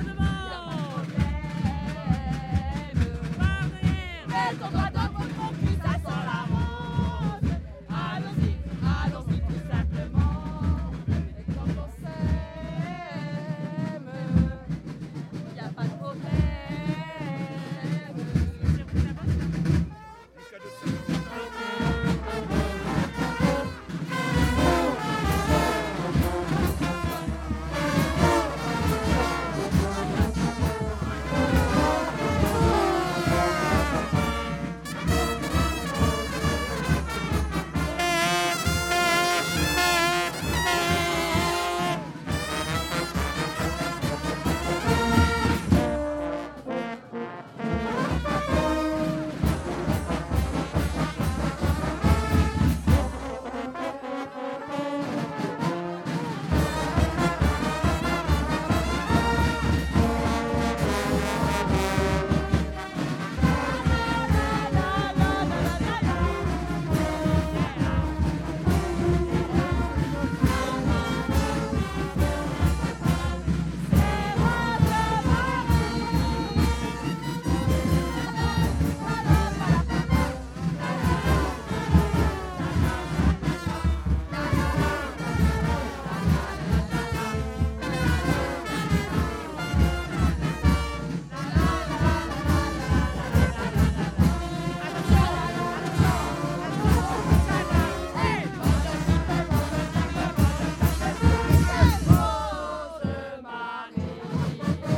Rue du Général de Gaulle, Zuydcoote, France - Carnaval de Zuycoot
Dans le cadre des festivités du Carnaval de Dunkerque
Bande (défilée) de Zuydcoot (Département du Nord)